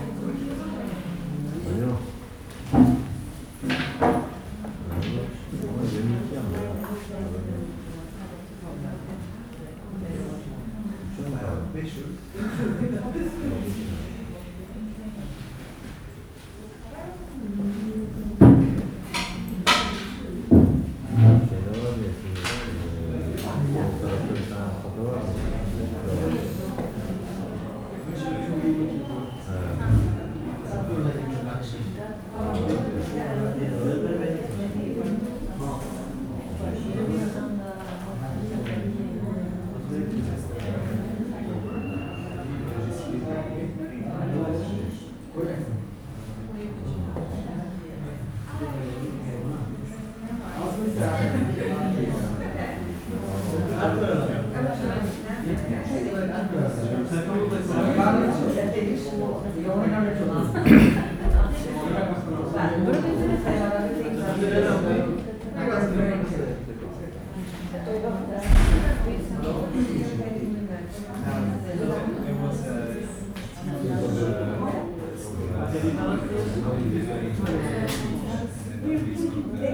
Friedrich-Krause-Ufer, Berlin, Germany - Waiting – Ausländerbehörde (aliens registration office)
Waiting to be registered as an alien. Unlike other recent bureaucratic waits in Berlin this takes place in newer building – still huge – with smaller waiting spaces but which are absolutely packed with people, families, children, young babies - many are Turkish but there are others from the world over – and not nearly enough chairs. A queue winds out of the door. The sound is the constant murmuring of subdued conversation, people changing places, shifting positions, greeting familiar faces. Your interview number is displayed only visually (no sound alerts) on a pearly white screen. It is the room's focus. There's nothing to do except wait, play with your phone and keep an eye on the slowly changing black and red numbers. Many are there all day. Some start at 4am. But I'm lucky and a privileged European; my number appears after only 25min.